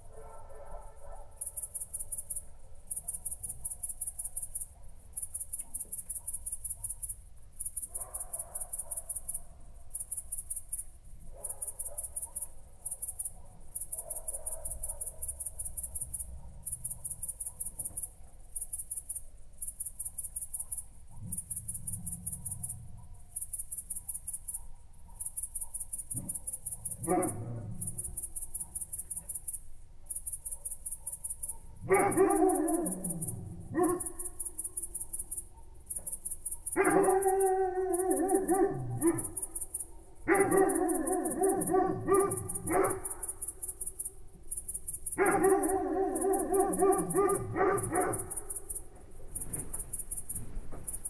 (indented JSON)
{
  "title": "Pod Lipą, Borsuki, Poland - (835b AB) Crickets into dogs",
  "date": "2021-08-21 01:10:00",
  "description": "Overnight recording caught an interesting transition from crickets to dogs barking (no edit has been made).\nRecorded in AB stereo (17cm wide) with Sennheiser MKH8020 on Sound Devices MixPre6-II",
  "latitude": "52.28",
  "longitude": "23.10",
  "altitude": "129",
  "timezone": "Europe/Warsaw"
}